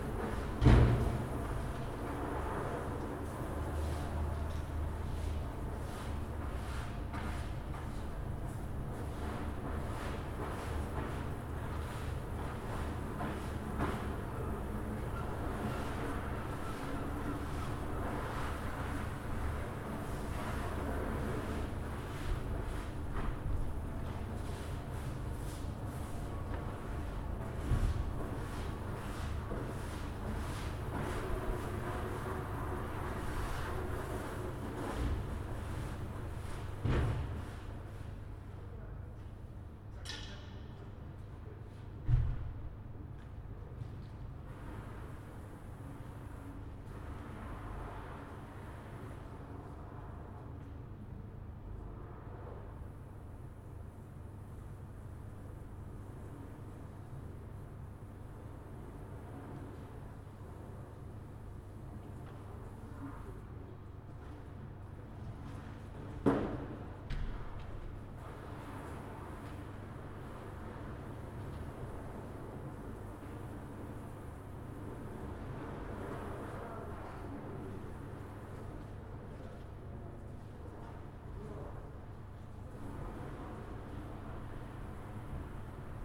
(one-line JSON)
{"title": "Vabaduse väljak, Tallinn, Estonia - Cleaning of carpets", "date": "2019-10-20 15:26:00", "description": "Workers cleaning carpets in courtyard, someone is practicing piano, tram sound from far away", "latitude": "59.43", "longitude": "24.75", "altitude": "28", "timezone": "Europe/Tallinn"}